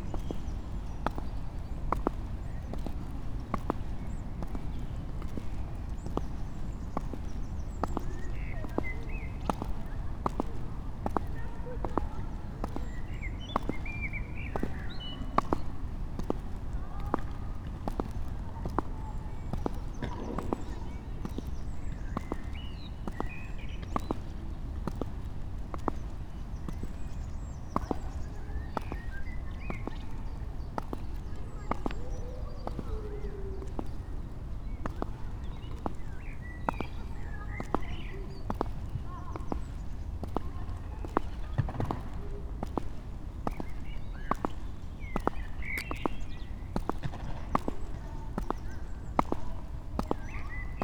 inside the pool, mariborski otok - with clogs ...
walking, dry leaf here and there, winds, two boys skating in smaller pool, birds
Kamnica, Slovenia, 1 April 2015